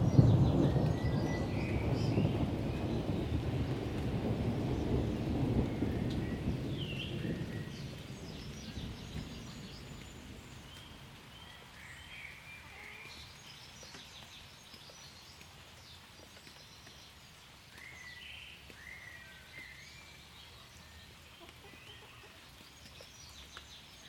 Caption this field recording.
Rolling thunder through Bournemouth in the distance and a little light rain, traffic and birdsong in the pleasure gardens.